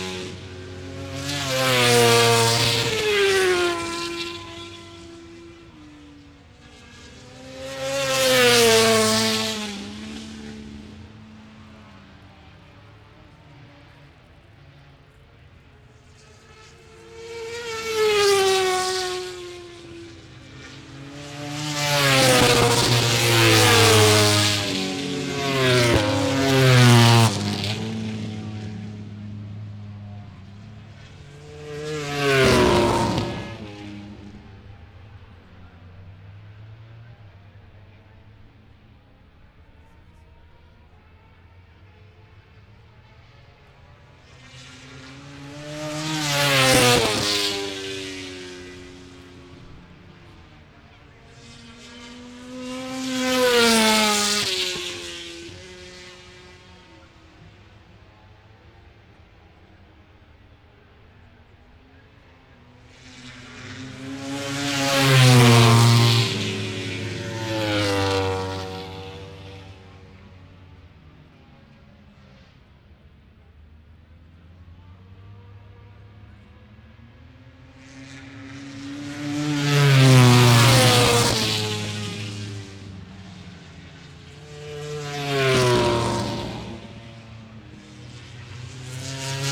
Unnamed Road, Derby, UK - british motorcycle grand prix 2005 ... motogp qualifying ...
british motorcycle grand prix 2005 ... motogp qualifying ... one point stereo mic ... audio technica ... to minidisk ...